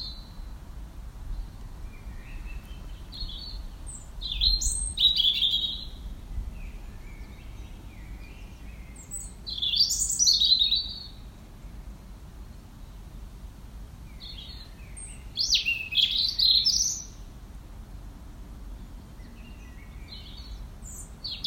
Palatine Rd, Stoke Newington, London, UK - Blackbirds 3am
19 January 2019, 03:10